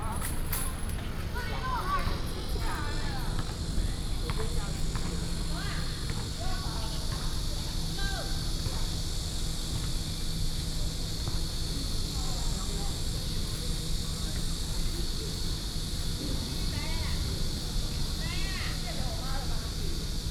Haishan Rd., Tucheng Dist. - In the small park
In the small park, Close to school, Bird calls, Cicadas called
Binaural recordings
Sony PCM D50 + Soundman OKM II